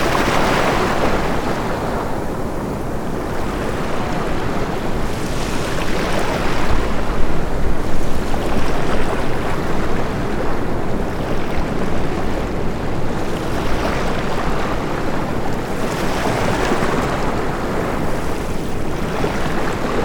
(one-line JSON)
{"title": "Cox's Bazar, Bangladesh - Ocean waves, Cox's bazar beach", "date": "2019-06-11 17:27:00", "description": "Cox's bazar is known for it's very long sea beach. This recording was made on a summer afternoon on the beach. There was no one around, it was totally empty. So you get to hear the Bay of Bengal without any interruption.", "latitude": "21.33", "longitude": "92.03", "timezone": "Asia/Dhaka"}